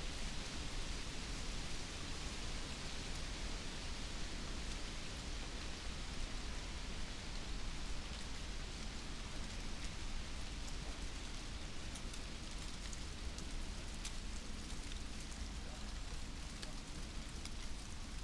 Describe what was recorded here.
dry leaves flying and slowly descending on soft autumn carpet, wind, passers-by walking above